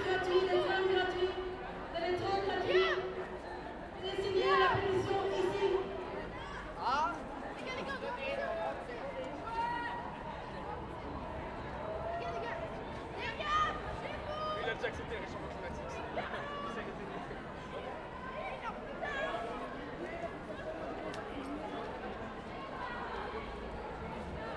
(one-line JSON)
{"title": "Maurice Lemonnierlaan, Brussel, België - PVDA/PTB climate protests", "date": "2019-01-31 12:35:00", "description": "PVDA/PTB continues trying to hijack the climate protest after the demonstration has passed by", "latitude": "50.84", "longitude": "4.34", "altitude": "20", "timezone": "GMT+1"}